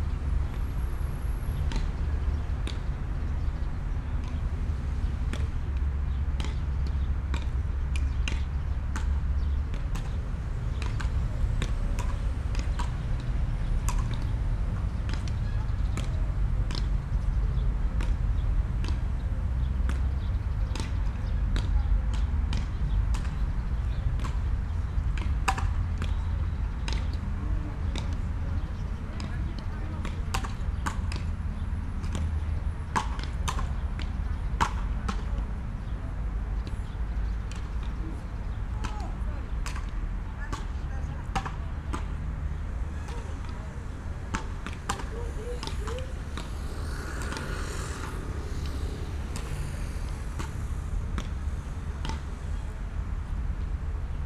Athen, Palaio Faliro, Leof. Posidonos - beachball players
stereo beachball players at Leof. Posidonos
(Sony PCM D50, DPA4060)
Paleo Faliro, Greece, 6 April